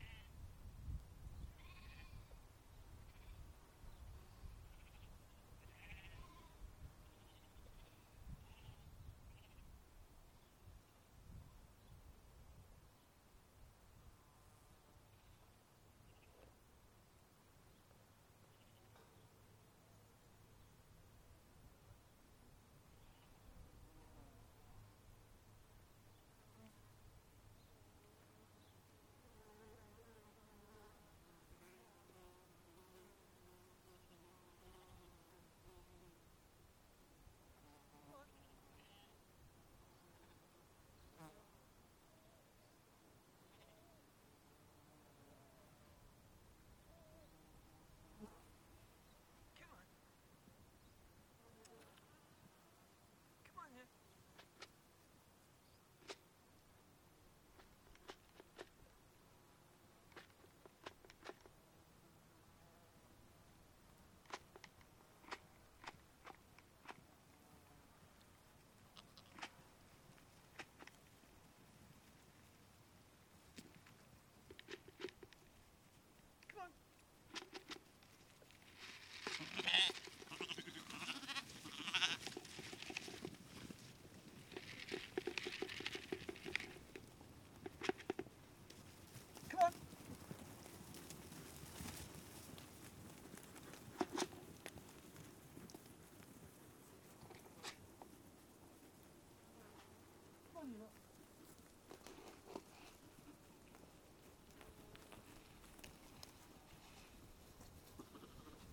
North Hamarsland, Tingwall, Shetland Islands, UK - Listening to Pete Glanville's organic Shetland sheep entering their pen
This is the sound of Pete Glanville bringing his small flock of Shetland sheep into their pen, and giving them some supplementary organic feed. You can hear in the recording the sheep munching their food and occasionally kicking the food trough, Pete talking to the sheep, and one of the sheep greedily eating its food so fast that it makes itself cough and splutter! It was a beautiful, sunny day when we made this recording, and Pete helped me immensely by explaining the schedule for the sheep, so that I could try to fit my recordings around their daily routine. Every day they come down to have their feed at around 10am, so I arrived just in time to record this. They are beautiful small short-tailed sheep, in many different colours, and Pete is one of several farmers who are pursuing an organic route for the rearing and processing of Shetland wool. Recorded with Audio Technica BP4029 and FOSTEX FR-2LE.